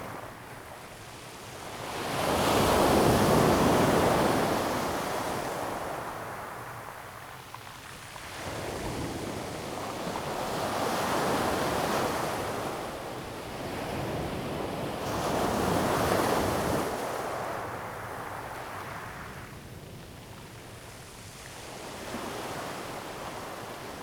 豐原里, Taitung City - Waves
Waves, Very hot weather
Zoom H2n MS+XY